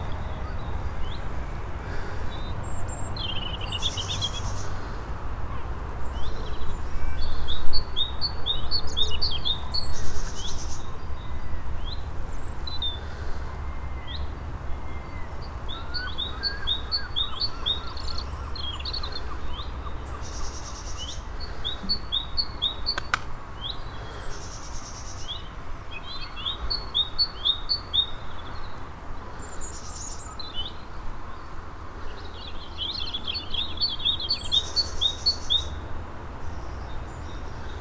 Verne Hill Rd, Portland, Dorset - Verne Hill Rd, Portland
28 April, ~10:00